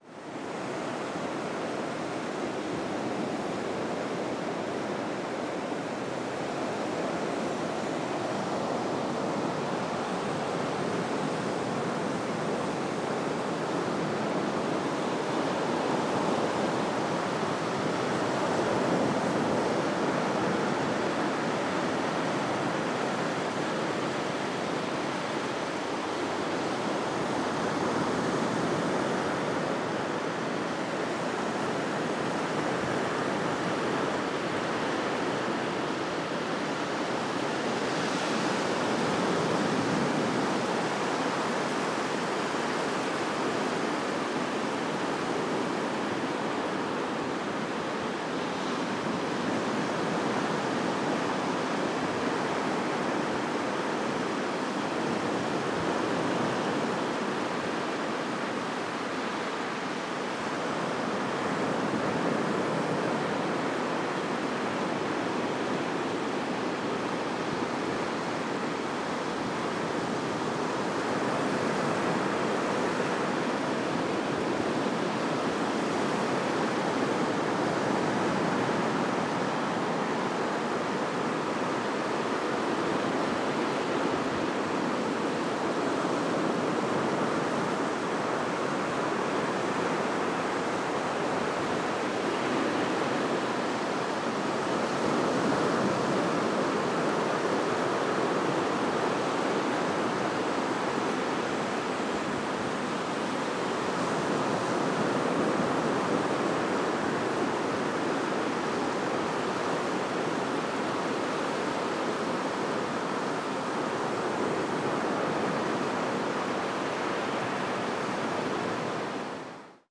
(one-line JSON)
{"title": "Boneyard Beach (Bulls Island), Lighthouse Rd, Awendaw, SC, USA - Boneyard Beach (Bull Island)", "date": "2018-09-21 13:30:00", "description": "Recording of Boneyard Beach on Bull Island near Charleston, SC. I used a pair of omni Uši Pro microphones running through a Zoom H5.", "latitude": "32.91", "longitude": "-79.58", "altitude": "2", "timezone": "America/New_York"}